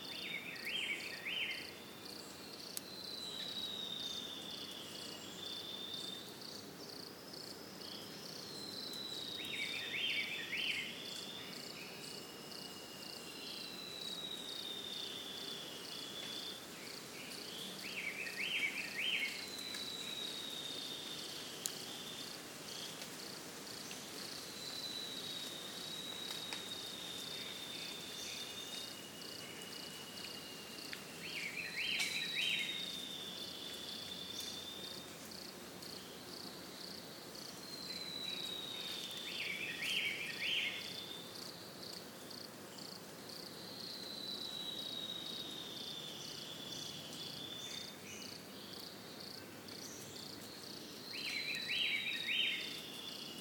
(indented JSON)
{
  "title": "Parque da Cantareira - Núcleo do Engordador - Trilha da Mountain Bike - vi",
  "date": "2016-12-20 08:29:00",
  "description": "register of activity",
  "latitude": "-23.41",
  "longitude": "-46.59",
  "altitude": "851",
  "timezone": "GMT+1"
}